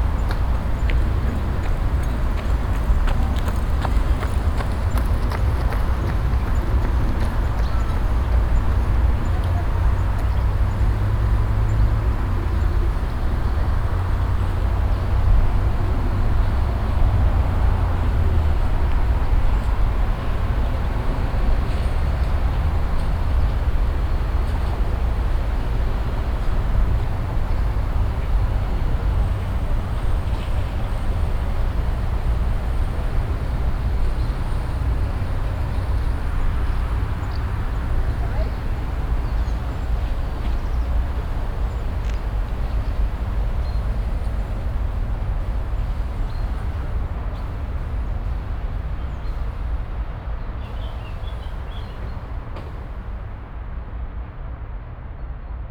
Würzburg, Deutschland - Würzburg, Mainwiesen, afternoon
At the meadows of the river Main at a warm summer afternoon. The constant sonorous traffic sound of the nearby riverdside street - passengers talking and walking by and a jogger passing by. The chirps of some birds in the trees.
soundmap d - social ambiences and topographic field recordings
24 July 2013, 18:00